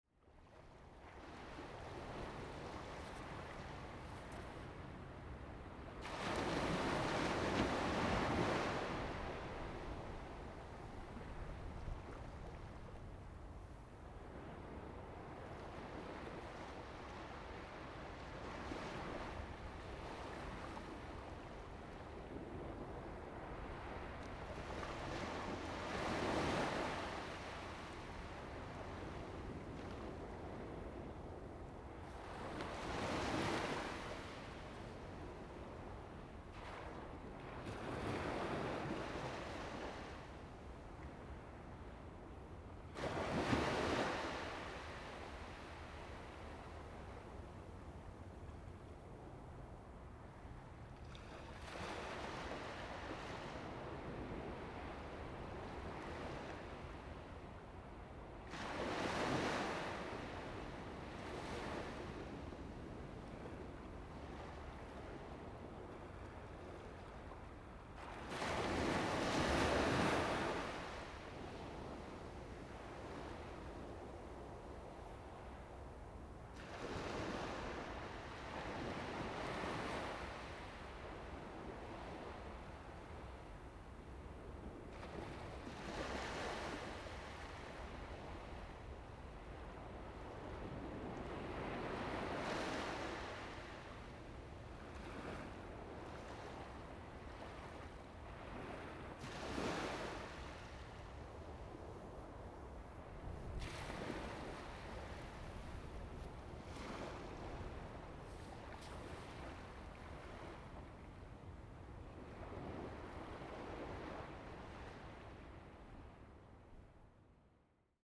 At the beach, Sound of the waves
Zoom H2n MS+XY